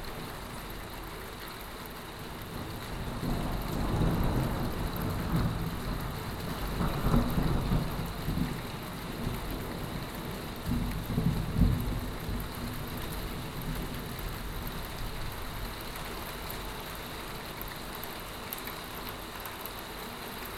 Vila de Gràcia, Barcelona, Barcelona, España - RAIN03112014BCN 02
Raw recording of rain.